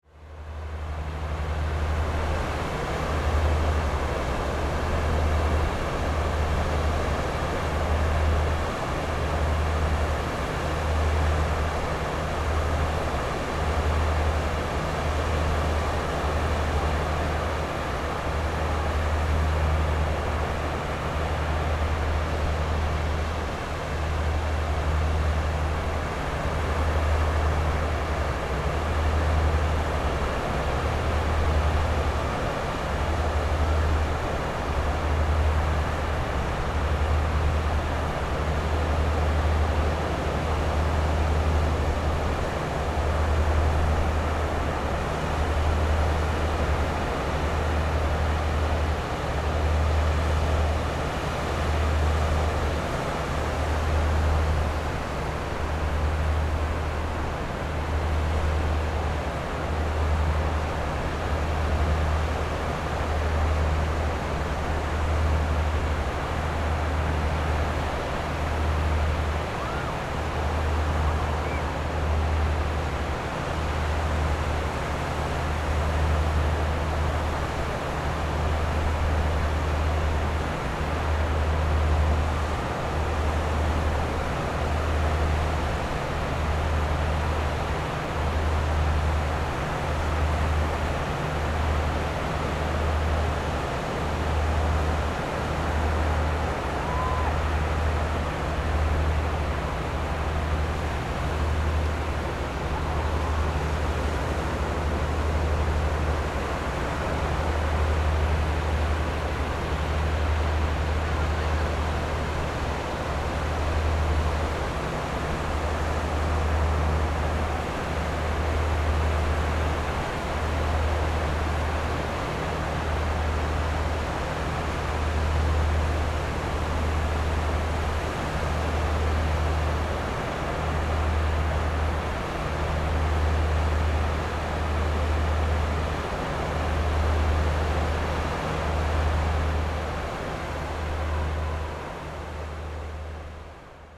Hot weather, In the beach, Sound of the waves, There are boats on the distant sea
Zoom H6 MS+ Rode NT4